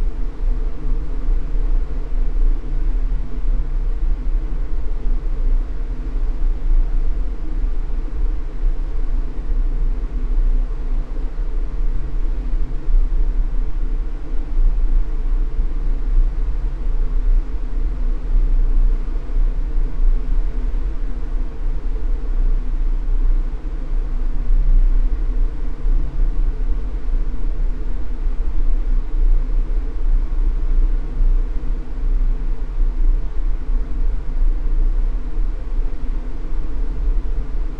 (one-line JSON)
{"title": "Genappe, Belgique - Pump", "date": "2017-04-09 16:00:00", "description": "In the woods, there's a small house. It's a big pump, extracting water from the ground.", "latitude": "50.59", "longitude": "4.50", "altitude": "145", "timezone": "Europe/Brussels"}